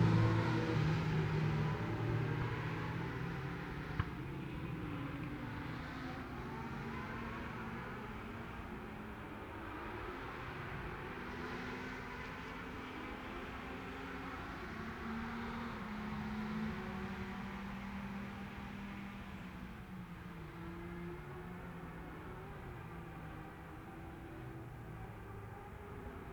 Cock o' the North road races ... Oliver's Mount ... 600cc motorbike practice ...